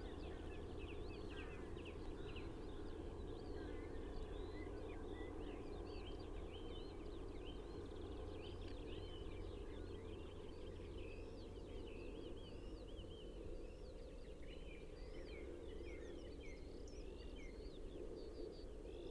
Main Rd, Malton, UK - dawn patrol ... valley bottom ...
dawn patrol ... valley bottom ... police helicopter on its way ... parabolic to mixpre 3 ... a lorry turns at the T junction the tyres complaining ... bird calls ... song ... skylark ... whitethroat ... song thrush ...